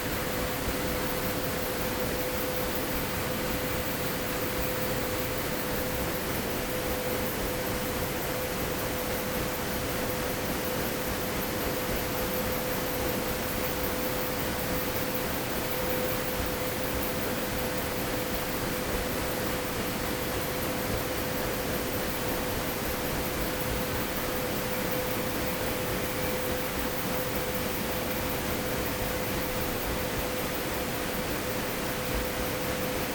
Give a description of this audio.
sound of the water mill below the cathedral, nowadays used as a small generator, (Sony PCM D50, DPA4060)